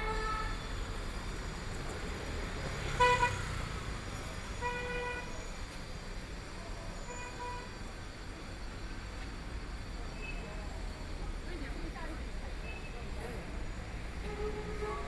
彰武路, Yangpu District - in the Street
University nearby streets, And from the sound of the crowd, Traffic Sound, Binaural recording, Zoom H6+ Soundman OKM II
Yangpu, Shanghai, China, 21 November 2013, 17:13